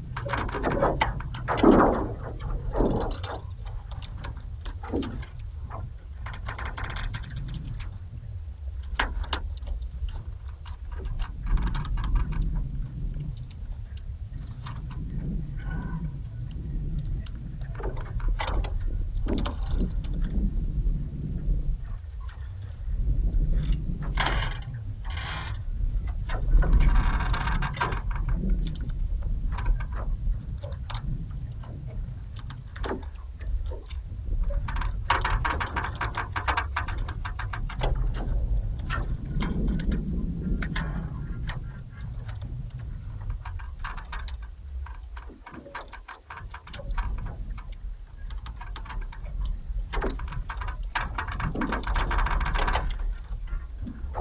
Šlavantai, Lithuania - Frozen pond slowly melting
Contact microphone recording of a frozen pond at melting temperature. Four microphones were used and mixed together. Various ice cracking sounds are heard together with gushes of wind blowing along the surface.